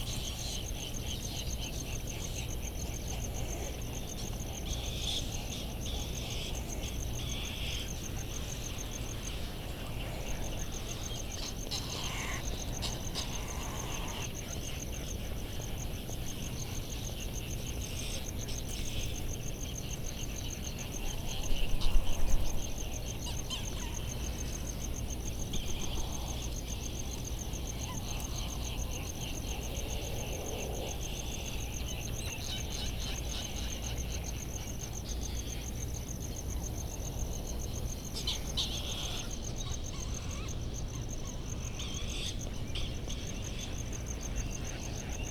United States Minor Outlying Islands - Bonin petrel soundscape ...
Bonin petrel soundscape ... Sand Island ... Midway Atoll ... bird calls ... bonin petrels ... laysan albatross ... white tern ... black noddy ... open lavaliers on mini tripod ... back ground noise ...